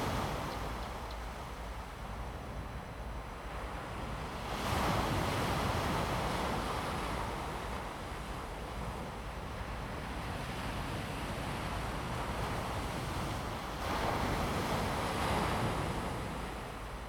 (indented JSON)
{
  "title": "Jialu, Fangshan Township, Pingtung County - Late night at the seaside",
  "date": "2018-03-28 02:56:00",
  "description": "Sound of the waves, Late night at the seaside\nZoom H2n MS+XY",
  "latitude": "22.33",
  "longitude": "120.62",
  "altitude": "3",
  "timezone": "Asia/Taipei"
}